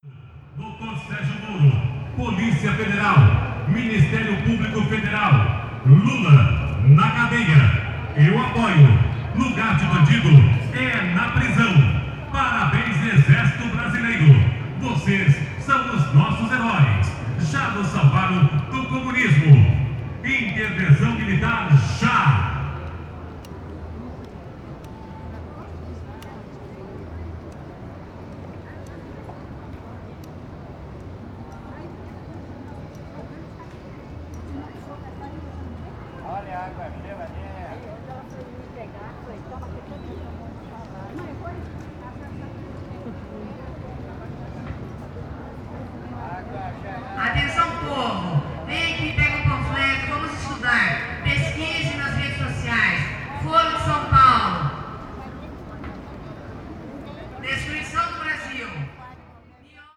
Calçadão de Londrina: Manifestação: intervenção militar - Manifestação: intervenção militar / Manifestation: military intervention
Panorama sonoro: pequeno grupo de manifestantes nas proximidades da Praça Gabriel Martins, com apoio de um carro de som, discursava a favor de intervenção militar no Governo Federal, exaltava as ações do exército brasileiro durante a ditadura militar e a operação Lava Jato da Polícia Federal, distribuía panfletos e abordava pedestres para conversar acerca de seus ideais. Poucas pessoas demonstravam se interessar pela manifestação. Nas proximidades, um vendedor de água mineral anunciava seu produto.
Sound panorama: small group of demonstrators near Gabriel Martins Square, supported by a sound car, spoke in favor of military intervention in the Federal Government, exalted the actions of the Brazilian army during the military dictatorship and Lava Jato Operation of the Federal Police, distributed leaflets and approached pedestrians to talk about their ideals. Few people showed interest in the manifestation. Nearby, a mineral water vendor advertised his product.